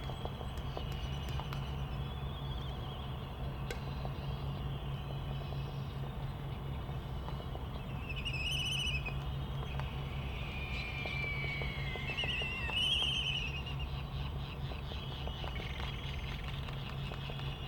United States Minor Outlying Islands - Laysan albatross soundscape ...
Sand Island ... Midway Atoll ... soundscape ... laysan albatross ... white terns ... black noddy ... bonin petrels ... Sony ECM 959 one point stereo mic to Sony Minidisk ... background noise ...
25 December, 16:30